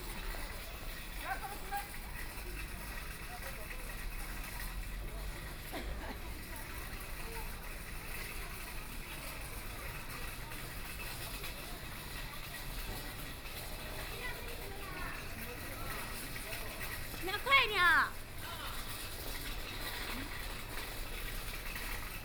Fu Jia St., Shanghai - in the old streets
Walking in the old streets and the voice of the market, Walking through the streets in traditional markets, Binaural recording, Zoom H6+ Soundman OKM II